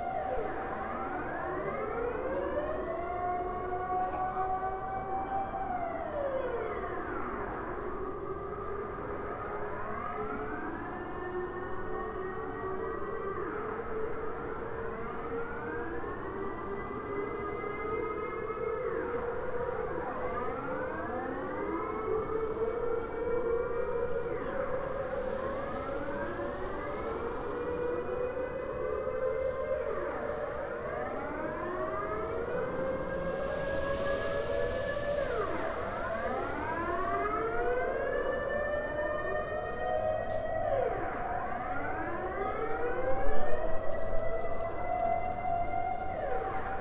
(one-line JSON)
{"title": "Den Haag, Valkenboslaan 250, Sirens test", "date": "2011-02-07 12:00:00", "latitude": "52.07", "longitude": "4.28", "timezone": "Europe/Amsterdam"}